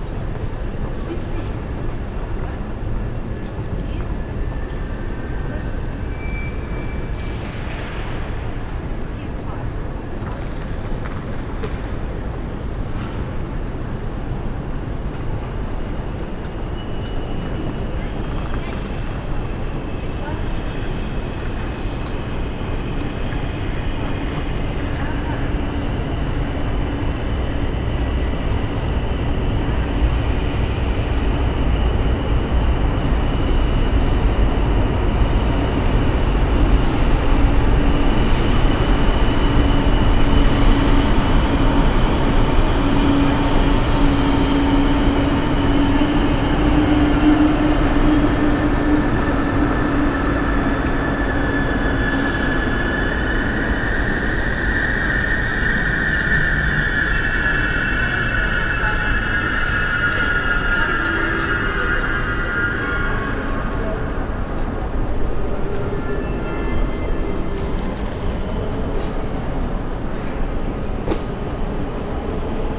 {"title": "berlin main station, hall - berlin, main station, hall", "date": "2009-05-25 10:28:00", "description": "soundmap d: social ambiences/ listen to the people - in & outdoor nearfield recordings", "latitude": "52.53", "longitude": "13.37", "altitude": "27", "timezone": "Europe/Berlin"}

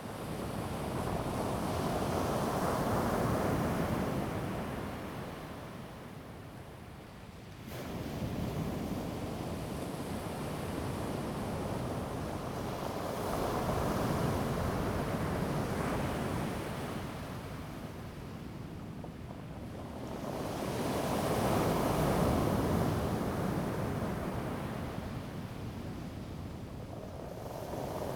{"title": "南田海岸親水公園, 達仁鄉南田二號橋 - Waves and Rolling stones", "date": "2018-04-23 14:43:00", "description": "Waves, Rolling stones\nZoom H2n MS+XY", "latitude": "22.28", "longitude": "120.89", "altitude": "1", "timezone": "Asia/Taipei"}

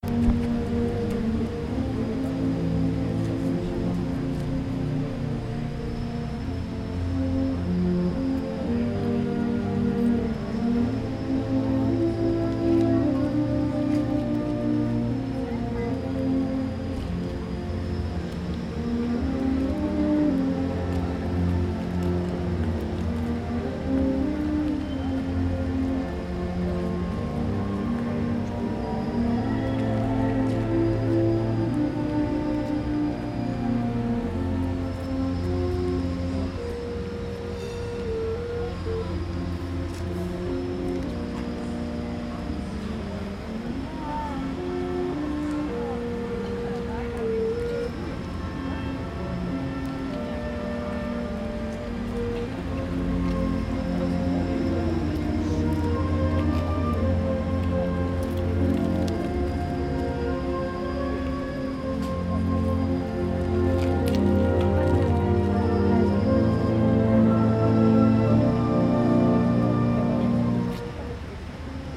{"title": "Altstadt-Nord, Köln, Deutschland - Freiluftkonzert des Nordholländischen Jugendorchesters auf dem Neumarkt / Open air concert of the North Dutch Youth Orchestra", "date": "2014-07-21 14:00:00", "description": "Mitten in der Stadt, umströmt vom Verkehr spielt das Nordholländische Jugendorchester.\nRight in town, immersed of the traffic plays the North Holland Youth Orchestra.", "latitude": "50.94", "longitude": "6.95", "altitude": "57", "timezone": "Europe/Berlin"}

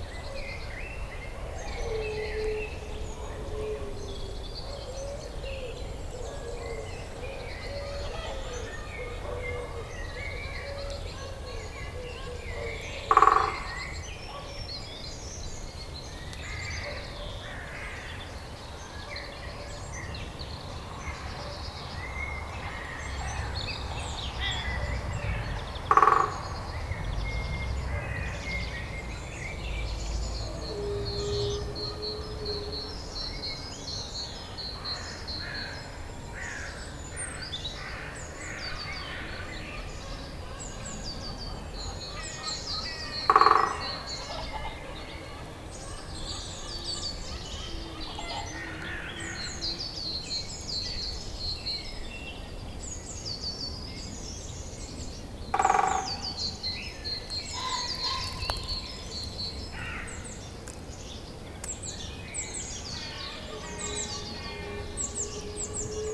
{"title": "Court-St.-Étienne, Belgique - Woodpecker", "date": "2016-04-12 13:00:00", "description": "A European green woodpecker strikes a tree. In a typical rural landscape of Belgium, pheasant screams, common chiffchaff screams and a donkey screams (in fact near everybody scream in the woods ^^). Also, you can hear periodically a wolf ! It's a dog, finding time so long alone...", "latitude": "50.61", "longitude": "4.53", "altitude": "90", "timezone": "Europe/Brussels"}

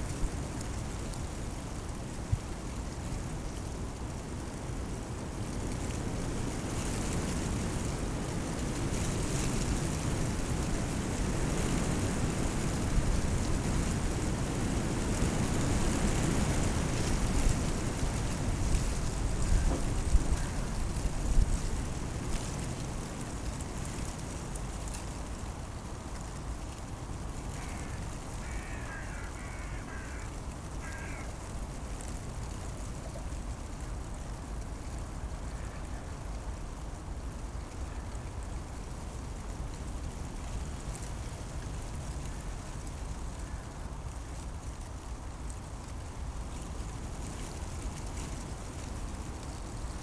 {"title": "Tempelhofer Feld, Berlin - oak tree, wind, leaves", "date": "2015-01-03 15:15:00", "description": "Berlin, Tempelhof, old airfield area, location of the little oak tree revisited. cold and strong wind, rattling leaves\n(Sony PCM D50, DPA4060)", "latitude": "52.48", "longitude": "13.40", "altitude": "44", "timezone": "Europe/Berlin"}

